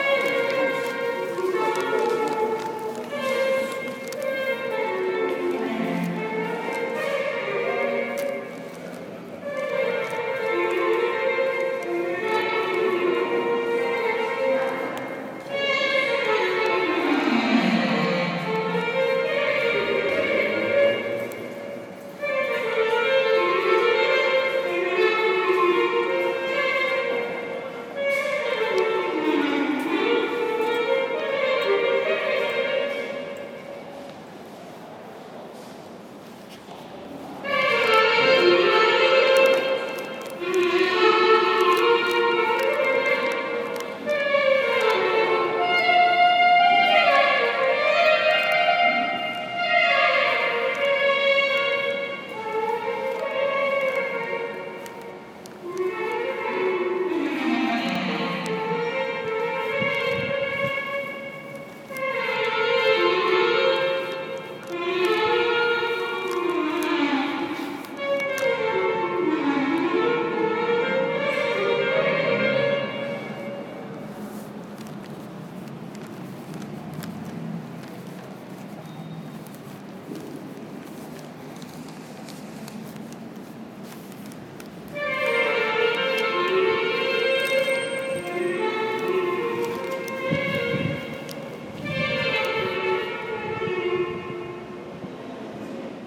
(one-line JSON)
{"title": "Kreuzberg, Berlin, Deutschland - Zwischengeschoss mezzanine Moritzplatz", "date": "2017-06-06 14:30:00", "description": "Technically, this is not a good recording: I had a crackling paper bag in my arm, just an iphone to record and no headphones. The wind of the subway blows into the microphone, but it shows well the atmosphere of the Berlin intermediate worlds.\nUnder the Moritzplatz is a flat round intermediate floor with four entrances and exits, which serves as a pedestrian underpass and subway entrance. I walk around without a goal. A woman with a heavy shopping basket crosses the hall, quietly booming. I accompany her a little. Her murmuring singing overlaps with the clarinet. The clarinetist sits near the subway entrance leaned at a column and plays whenever people appear - in between, he pauses. Sometimes very long pauses (in this recording the breaks are very short). He always plays the same riffs. The reverb is impressive. Few people go and come from all directions. Only when a subway arrives the hall suddenly is full. Almost nobody stops here - there is nothing else to do but exit.", "latitude": "52.50", "longitude": "13.41", "altitude": "34", "timezone": "Europe/Berlin"}